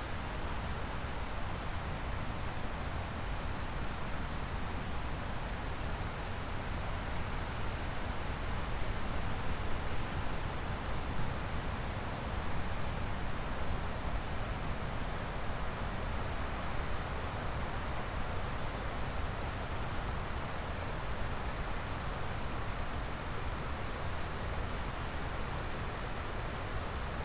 newly discovered mound (the place where castle stood) at the Viesa river. windy day, drizzle.